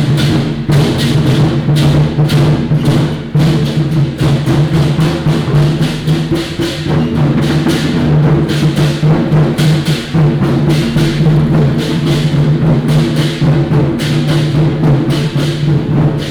temple fair, Walking in a small alley
New Taipei City, Taiwan, March 2017